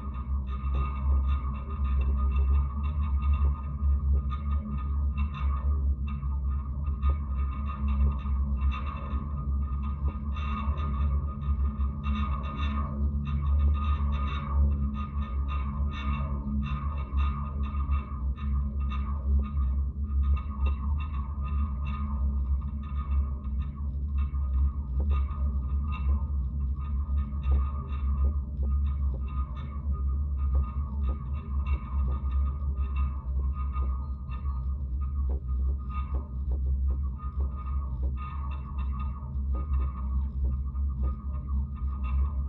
{
  "title": "Utena, Lithuania, supporting wires",
  "date": "2013-09-08 14:45:00",
  "description": "contact microphones on the mobile tower supporting wires",
  "latitude": "55.51",
  "longitude": "25.64",
  "altitude": "131",
  "timezone": "Europe/Vilnius"
}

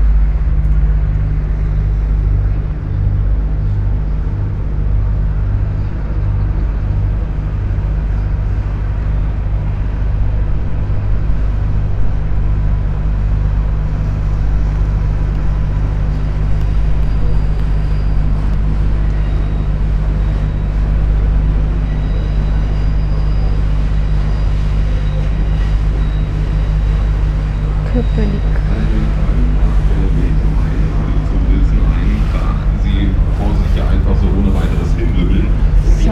{
  "title": "head of an island, arcades, Mitte, Berlin, Germany - standing still",
  "date": "2015-09-04 16:14:00",
  "description": "rivers Spree ships and S-bahn trains\nSonopoetic paths Berlin",
  "latitude": "52.52",
  "longitude": "13.40",
  "altitude": "32",
  "timezone": "Europe/Berlin"
}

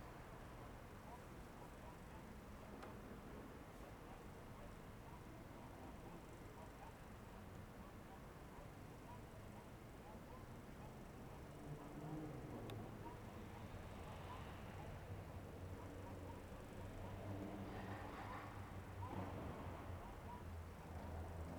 {
  "title": "Ascolto il tuo cuore, città. I listen to your heart, city. Several chapters **SCROLL DOWN FOR ALL RECORDINGS** - Round midnight with sequencer and LOL in background",
  "date": "2020-05-29 23:57:00",
  "description": "\"Round midnight with sequencer and LOL in background in the time of COVID19\" Soundscape\nChapter XCI of Ascolto il tuo cuore, città. I listen to your heart, city\nFriday, May 29th 2020, eighty days after (but day twenty-six of Phase II and day thirteen of Phase IIB and day seven of Phase IIC) of emergency disposition due to the epidemic of COVID19.\nStart at 11:57 p.m. end at 00:01 a.m. duration of recording 33’42”",
  "latitude": "45.06",
  "longitude": "7.69",
  "altitude": "245",
  "timezone": "Europe/Rome"
}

